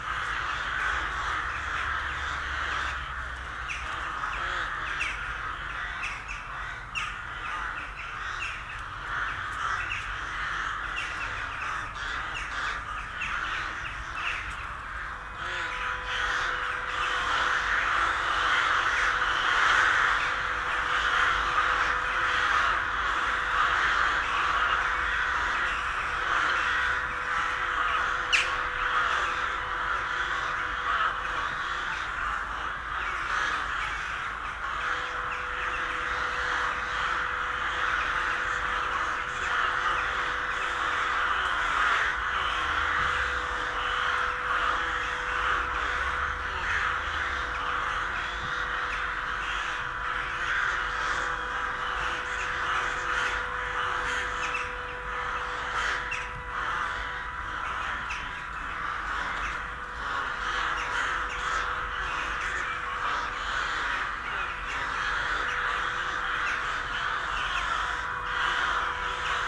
Utena, Lithuania, crows colony
Recorded with ambisonic (ambeo) microphones, so the best listening results will be with headphones.
Utenos rajono savivaldybė, Utenos apskritis, Lietuva, 24 March